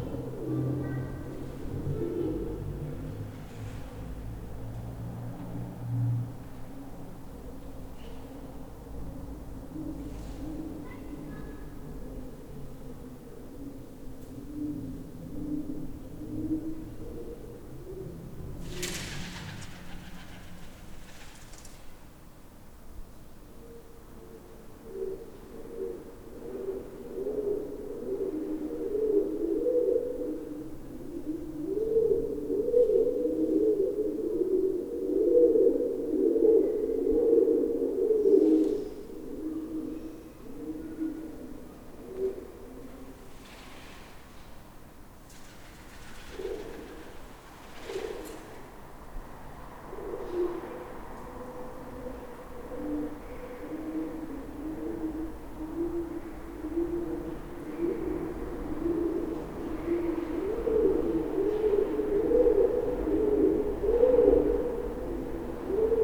Siilotie, Oulu, Finland - Pigeons inside a defunct workshop
Pigeons calling and flying inside a defunct workshop. You can also hear cars driving by and people speaking outside the workshop. Recorded with Zoom H5 with default X/Y capsule, noise removed in post.